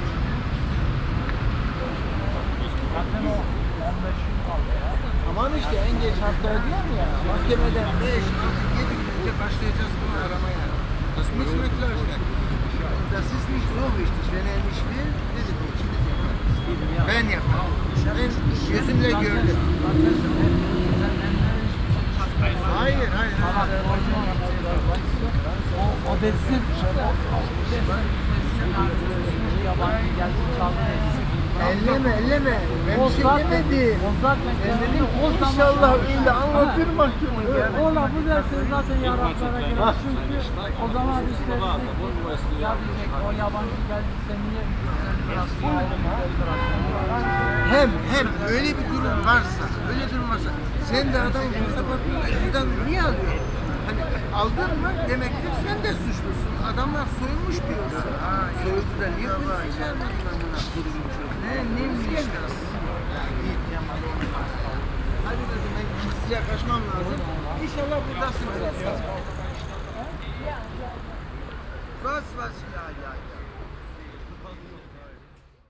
cologne, ebertplatz, afternoon conversations
soundmap nrw: social ambiences/ listen to the people - in & outdoor nearfield recordings